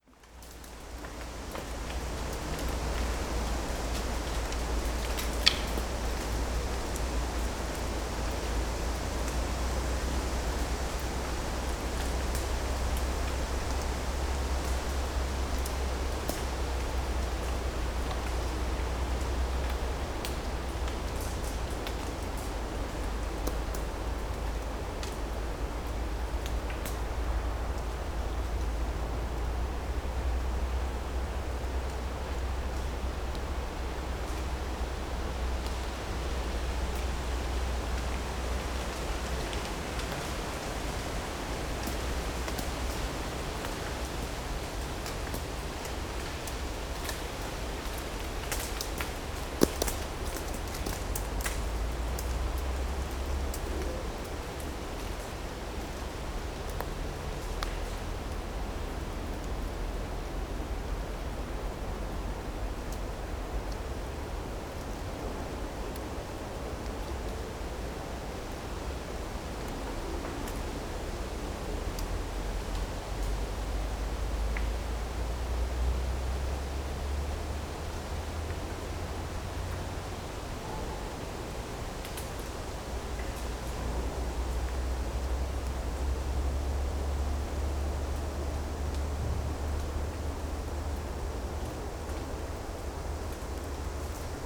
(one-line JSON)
{"title": "Morasko nature reserve - forest clearing", "date": "2018-09-12 11:56:00", "description": "autumn wind brings down lots of leaves, branches and acorns. this a quite spacious area of the forest, not too many bushes near the forest floor. quite nice to listen to wind playing among trees (roland r-07 internal mics)", "latitude": "52.48", "longitude": "16.89", "altitude": "139", "timezone": "Europe/Warsaw"}